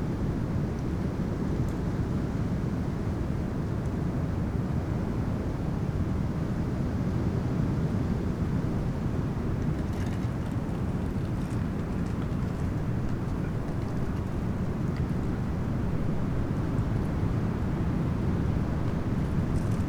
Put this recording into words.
dry leaves during storm, the city, the country & me: march